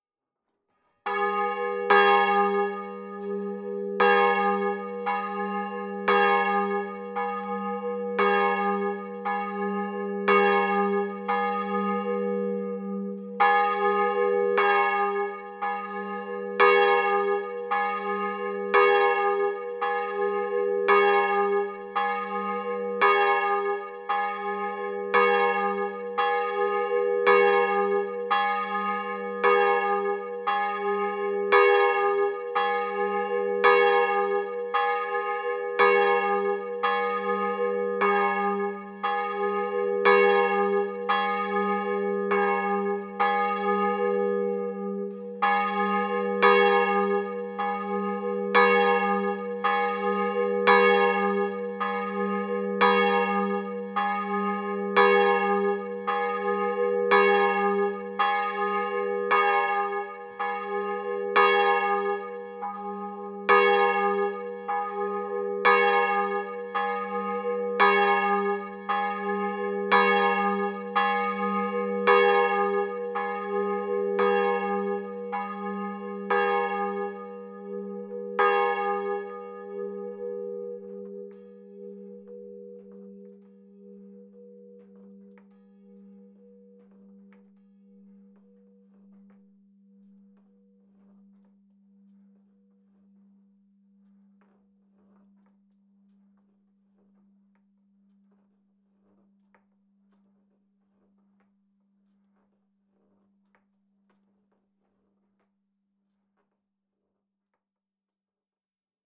Rue de l'Abbé Fleury, Saint-Victor-de-Buthon, France - St-Victor de Buthon - Église St-Victor et St-Gilles
St-Victor de Buthon (Eure-et-Loir)
Église St-Victor et St-Gilles
Volée cloche 2